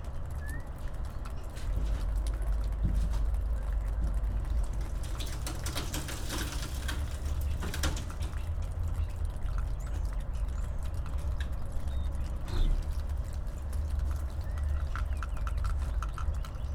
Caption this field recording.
Two condenser mics placed next to a window inside an abandoned house. Sounds of char crumbling as doors are opened and closed. Investigations with a contact mic and bullhorn.